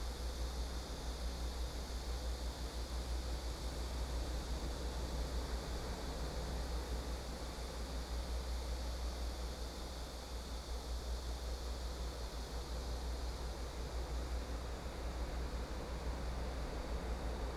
壯圍鄉過嶺村, Yilan County - Sound wave
Sound wave, Windbreaks, Birdsong sound, Small village
Sony PCM D50+ Soundman OKM II